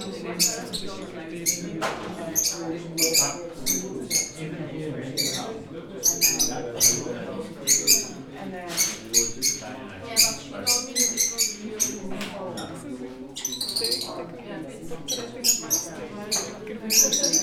Parrots in Unijazz cafe, Vodičkova
Early evening in Unijazz cafe. Parrots just after some passionate quarrel. Otherwise they rather tolerate guests of the cafe. Cafe is run by Unijazz the cultural organization. They publish the UNI - a monthly magazine tributed to music. It is oriented mostly on young more sofisticated readers. Unijazz cafe is very nice, calm, cultural place in the centre of Prague
5 February 2010